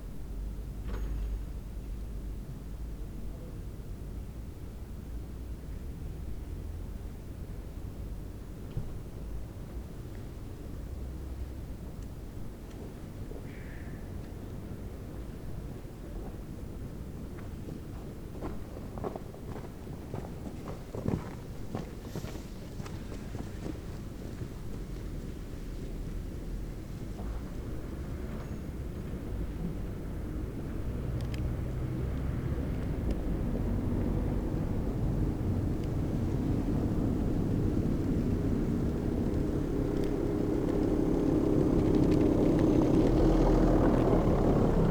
Berlin: Vermessungspunkt Friedel- / Pflügerstraße - Klangvermessung Kreuzkölln ::: 16.12.2010 ::: 01:17